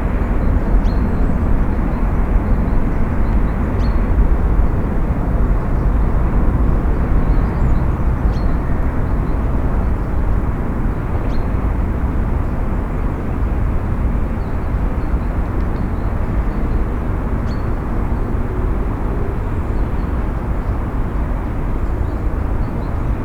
Montluel, Chemin de Ronde, Madone
up on the city, it seems like all the noise is coming there, car traffic, factories, a few winter birds across the recording.
PCM-M10, SP-TFB-2, binaural.